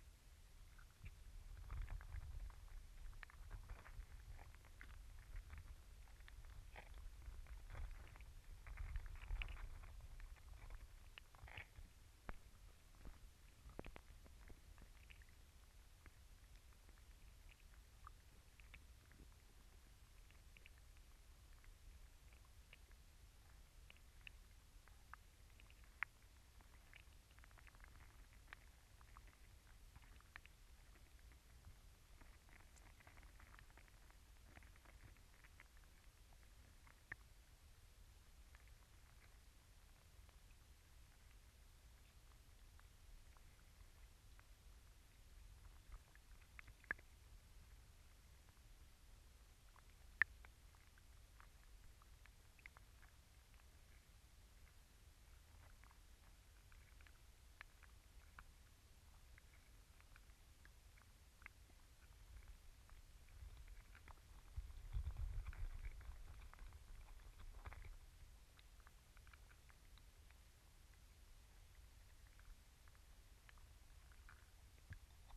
Crabs in Salt Marshes
hydrophone recording of crabs in small body of water